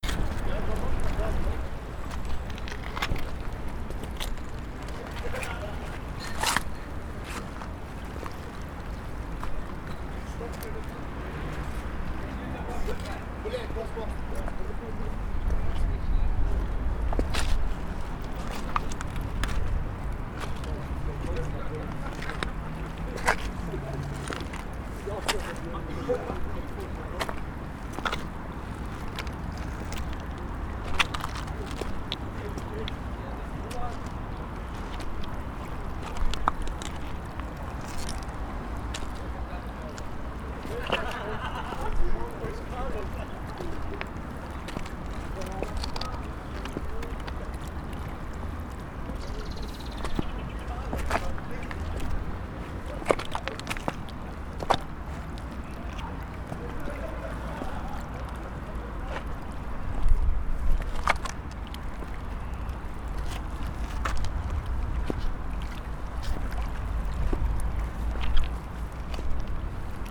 Innsbruck, Waltherpark am Inn Österreich - Frühling am Inn
walther, park, vogel, weide, schritte am inn, wasser, wellen, leute an promenade, lachen, waltherpark, vogelweide, fm vogel, bird lab mapping waltherpark realities experiment III, soundscapes, wiese, parkfeelin, tyrol, austria, anpruggen, st.